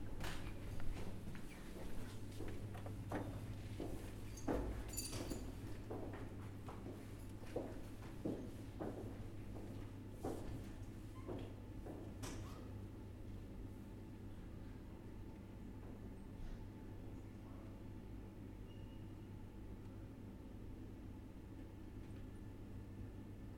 Eggenberg, Graz, Österreich - People at FH JOANNEUM